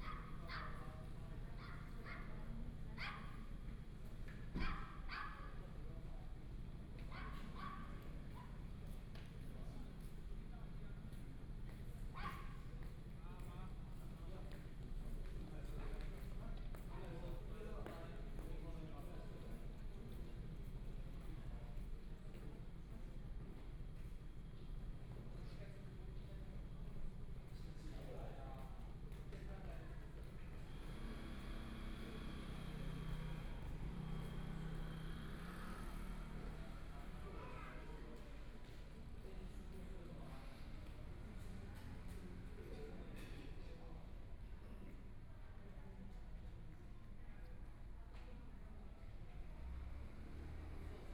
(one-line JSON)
{"title": "Hualien Station, Taiwan - The station hall at night", "date": "2014-02-24 18:40:00", "description": "The station hall at night\nPlease turn up the volume\nBinaural recordings, Zoom H4n+ Soundman OKM II", "latitude": "23.99", "longitude": "121.60", "timezone": "Asia/Taipei"}